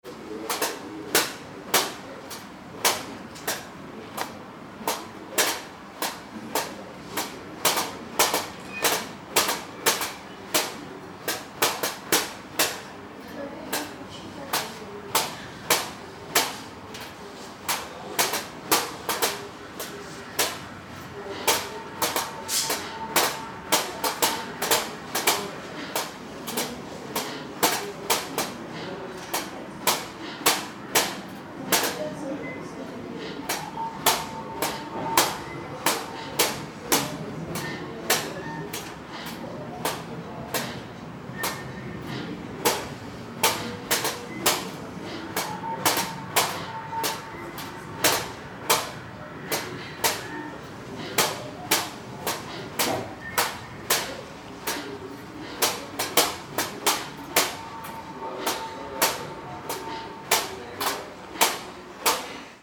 CERN, Geneva, Switzerland - Spark chamber in the CERN exposition
Spark chamber is a device for detection and real-time visualization of charged particles produced when cosmic rays hit the Earth atmosphere. What you hear is a stream of sparks appearing on arrays of electrodes inside the chamber each time a charged particle flies through the chamber.
France métropolitaine, European Union